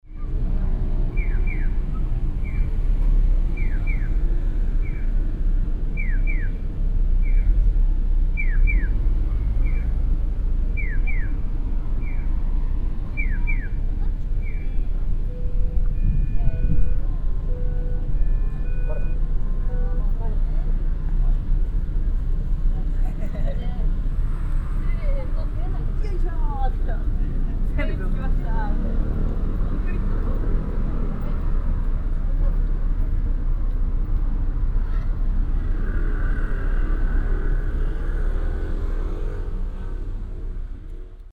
{
  "title": "yokohma, traffic sign",
  "date": "2011-07-01 13:18:00",
  "description": "Traffic in the evening time. A birdlike traffic sign informing the passengers that they are allowed to walk. Unfortunately some wind disturbance.\ninternational city scapes - topographic field recordings and social ambiences",
  "latitude": "35.45",
  "longitude": "139.65",
  "altitude": "8",
  "timezone": "Asia/Tokyo"
}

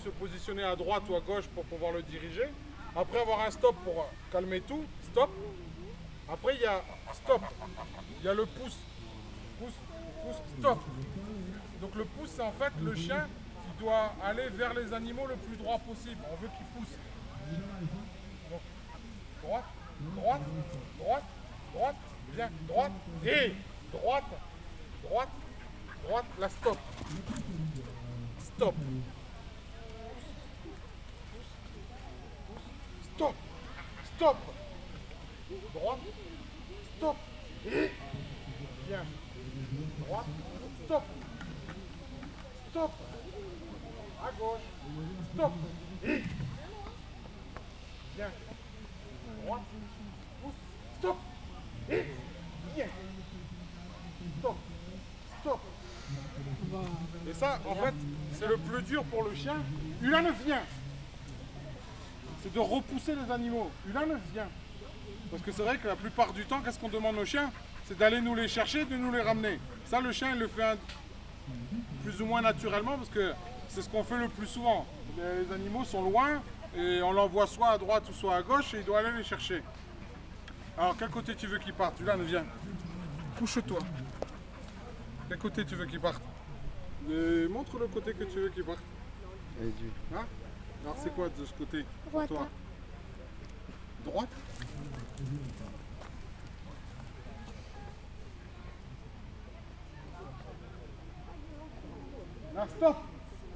{
  "title": "Nages Maison de Payrac",
  "date": "2011-08-13 11:09:00",
  "description": "Fête paysanne Maison de Payrac, démonstration de travail de Border, chiens de troupeaux.",
  "latitude": "43.68",
  "longitude": "2.77",
  "altitude": "1041",
  "timezone": "Europe/Paris"
}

